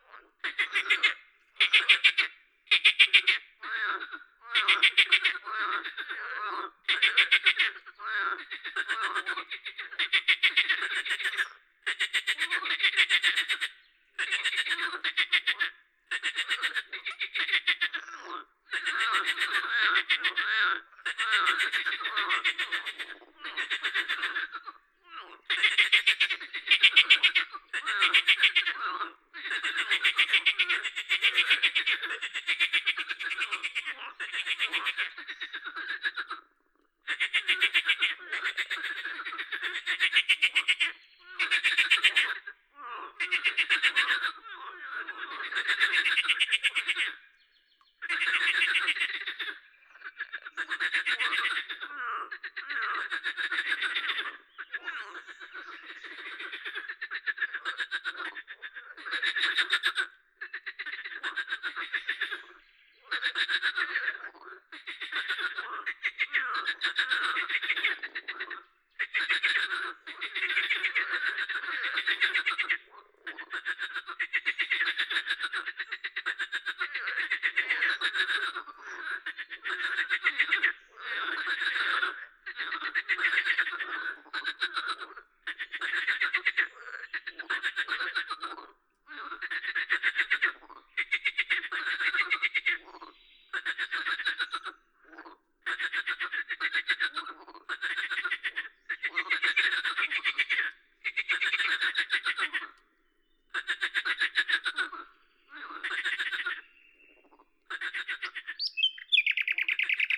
Lavours, France - printemps dans le Bugey
10/05/1998 vers 22H00 Marais de Lavours
Tascam DAP-1 Micro Télingua, Samplitude 5.1